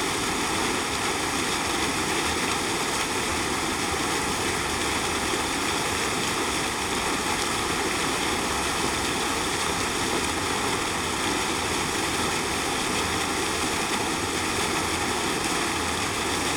Fountain, Dnipro, Ukraine - Fountain [Dnipro]